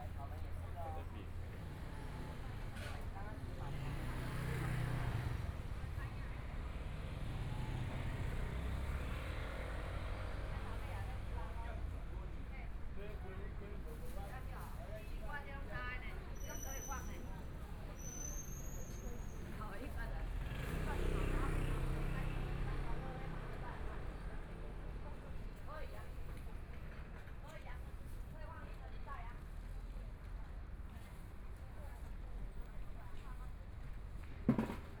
中山區, Taipei City - Soundwalk
Walking in the small streets, Through different streets, Binaural recordings, Zoom H4n+ Soundman OKM II